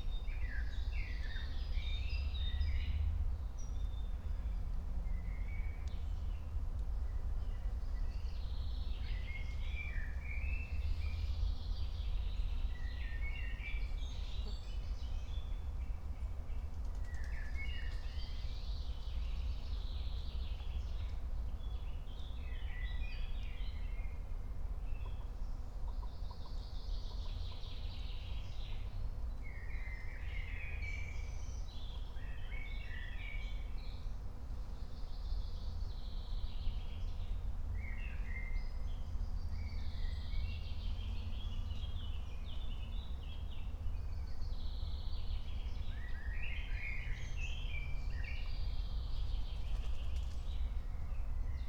15:39 Berlin, Königsheide, Teich - pond ambience